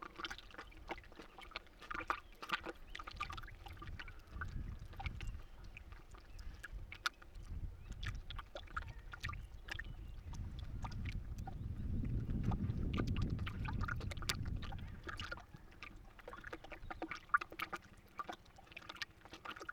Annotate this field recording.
little mics placed amongst the boards of bridge